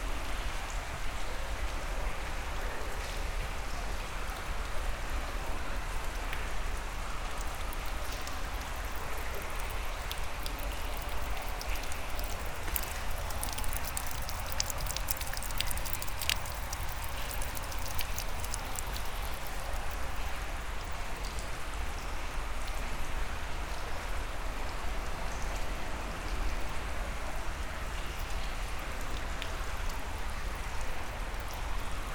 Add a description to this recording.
Walking into the underground river Senne, called Zenne in dutch. The Senne river is underground during 11,5 kilometers, crossing all Brussels city. There's 3 tunnels, from Anderlecht to Vilvoorde. Here it's the last tunnel, in the Vilvoorde city. It's very dirty everywhere, will I survive ?